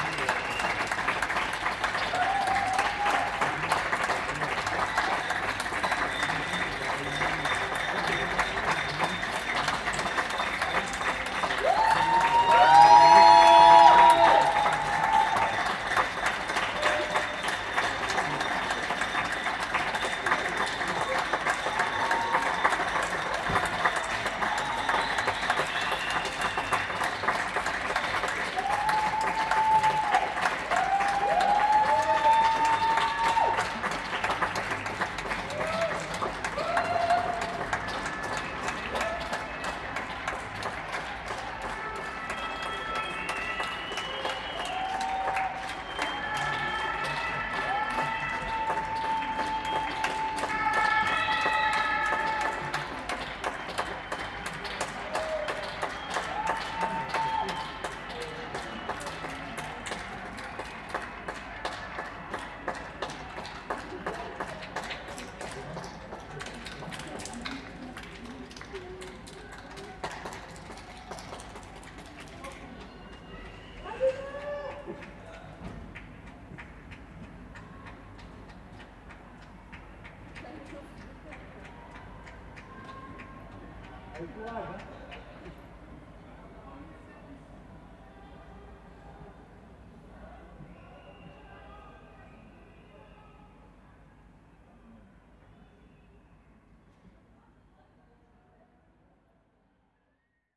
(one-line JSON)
{"title": "rue Bancel, Lyon, France - Applaudissement de 20h lors du Confinement 2020", "date": "2020-03-19 20:00:00", "description": "Applaudissement de 20h pour encourager les soignants lors du Confinement 2020 lié au Covid-19", "latitude": "45.75", "longitude": "4.84", "altitude": "173", "timezone": "Europe/Paris"}